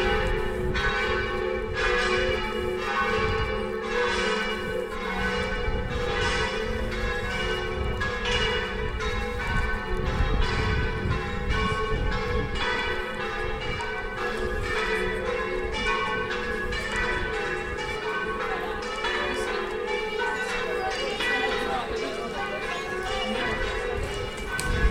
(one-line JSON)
{"title": "Venise, Italie - Vespera", "date": "2005-08-07 18:01:00", "description": "Angelus bells in Venezia. Walking around San Rocco & San Pantalon one can hear the sound of bells differently colored by the size & configuration of each little street but also a strange acoustic phenomenon wich is the permanence of a certain range of frequencies (around 400/500Hz) all along the walk. It feels like the whole space is saturated by this tone.", "latitude": "45.44", "longitude": "12.33", "altitude": "6", "timezone": "Europe/Rome"}